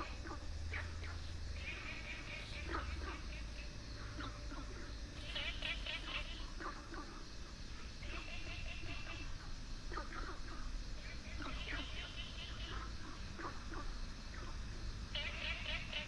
桃米溪, 埔里鎮桃米里 - Frogs sound
Frogs sound
Binaural recordings
Sony PCM D100+ Soundman OKM II
Puli Township, 桃米巷29-6號